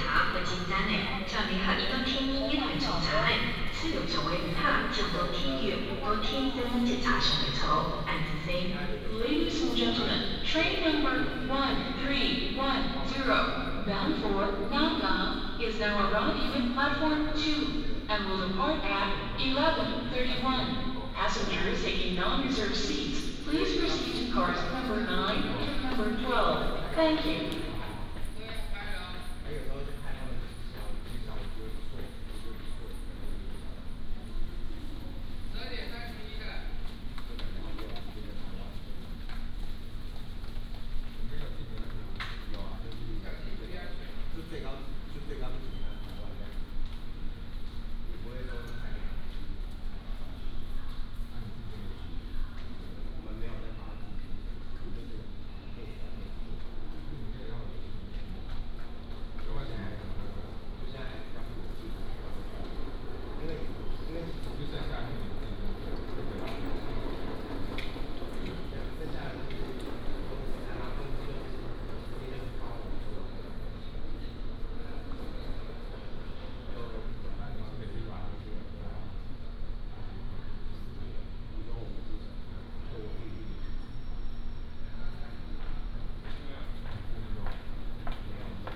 THSR Yunlin Station, Taiwan - Station Message Broadcast
Station Message Broadcast, In the station hall